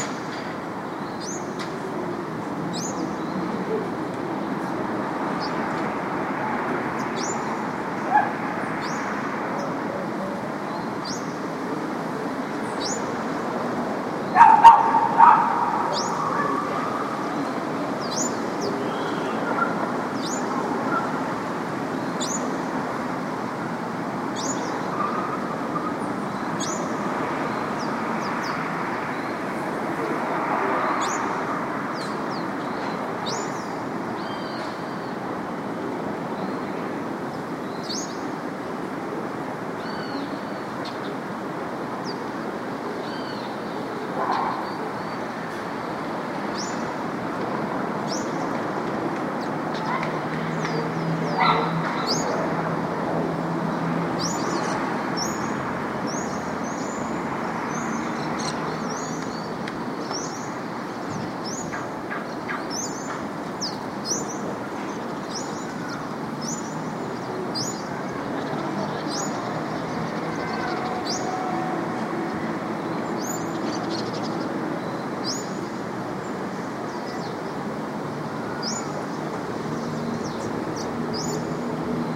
SQN 303 - Bloco F - Brasília, Brazil - AQN 303 - Bloco F - Brasília, Brazil
ambient sound in SQN 303 - Brasília, Brazil - WLD